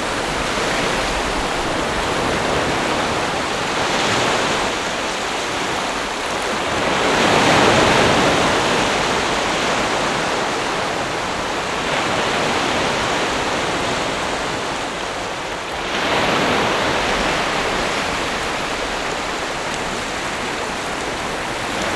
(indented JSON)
{
  "title": "Shimen, New Taipei City - The sound of the waves",
  "date": "2012-06-25 14:01:00",
  "latitude": "25.29",
  "longitude": "121.54",
  "timezone": "Asia/Taipei"
}